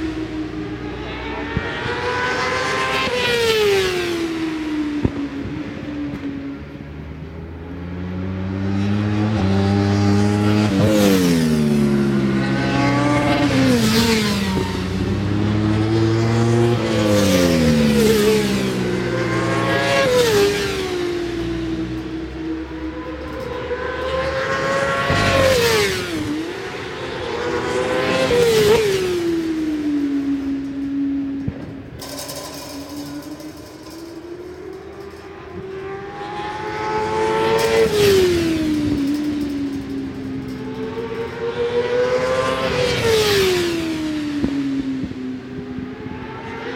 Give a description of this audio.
WSB 1998 ... Superbikes ... Qual (contd) ... one point stereo mic to minidisk ...